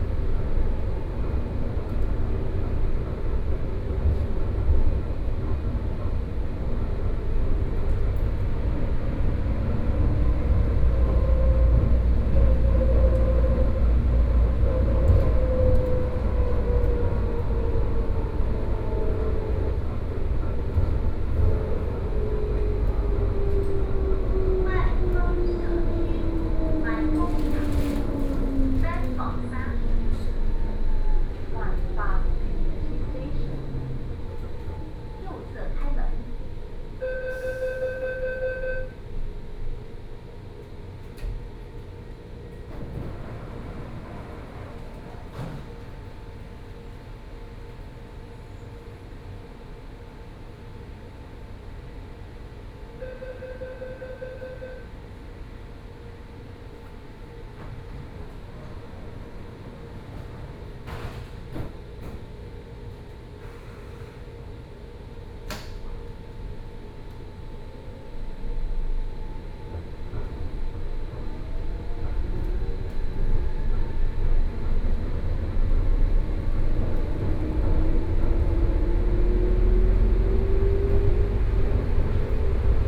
Daan District, Taipei City - Wenshan Line (Taipei Metro)
from Liuzhangli Station to Muzha Station, Sony PCM D50 + Soundman OKM II